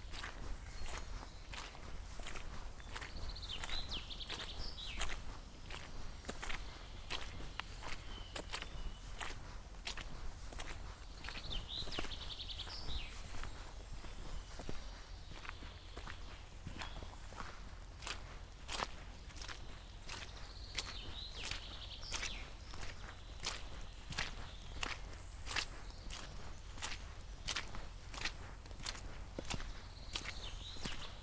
walk through forest after thunderstorm
Steps, birds, wind... Recorded june 2, 2008 - project: "hasenbrot - a private sound diary"